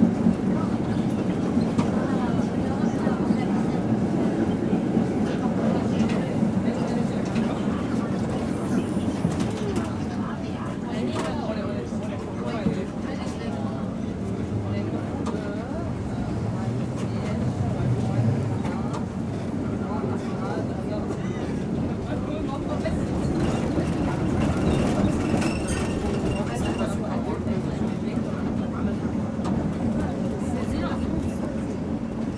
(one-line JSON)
{"title": "Rue de l'Arbre, Bruxelles, Belgique - Tram 93 between Sablon and Louise", "date": "2022-05-19 20:40:00", "description": "Old model tram.\nTech Note : Olympus LS5 Internal microphones.", "latitude": "50.84", "longitude": "4.35", "altitude": "61", "timezone": "Europe/Brussels"}